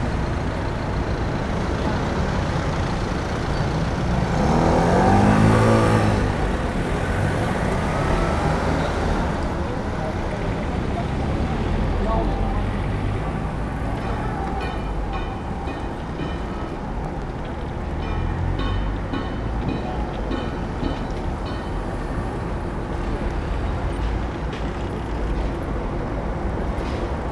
Berlin: Vermessungspunkt Maybachufer / Bürknerstraße - Klangvermessung Kreuzkölln ::: 20.08.2013 ::: 16:37